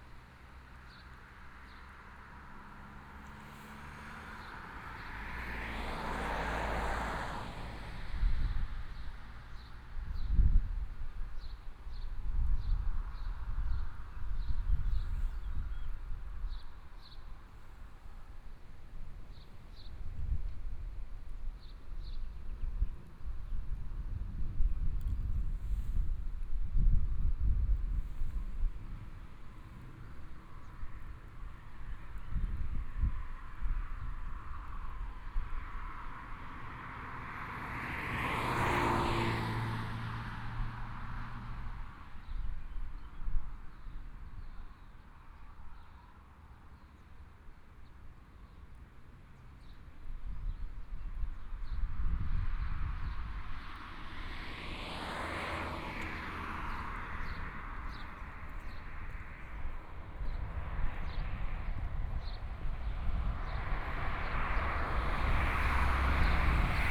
Eichethof, Hohenkammer, Germany - Traffic Sound
At the roadside, Traffic Sound